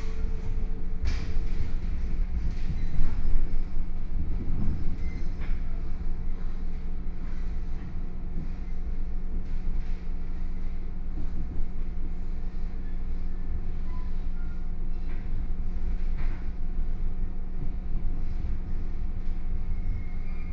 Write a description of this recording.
Local Train, from Xinma Station to Su'ao Station, Binaural recordings, Zoom H4n+ Soundman OKM II